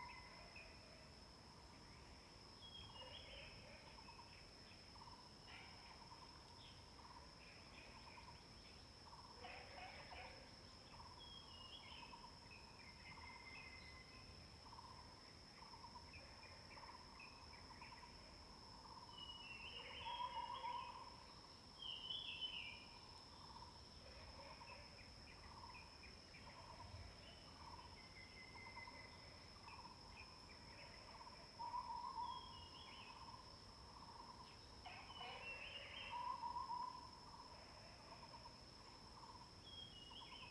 Hualong Ln., Yuchi Township 魚池鄉 - Bird and Frog sounds
Bird sounds, Frog sounds
Zoom H2n MS+XY
Nantou County, Yuchi Township, 華龍巷43號, 4 May 2016